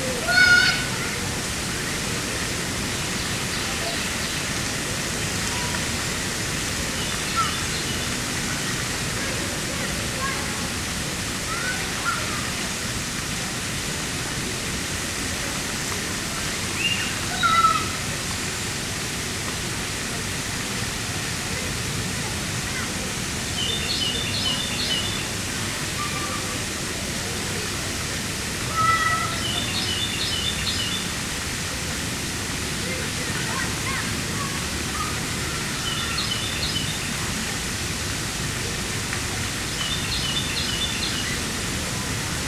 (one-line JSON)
{"title": "Riverside Park, Roswell, GA, USA - Riverside Park - Sprayground", "date": "2021-06-28 15:29:00", "description": "A recording taken from a table across from the miniature waterpark area at Riverside Park. Lots of water sounds and children playing. Noise from the road and parking lot also bleeds over into the recording.\n[Tascam DR-100mkiii w/ Primo EM-272 omni mics, 120hz low cut engaged]", "latitude": "34.01", "longitude": "-84.35", "altitude": "265", "timezone": "America/New_York"}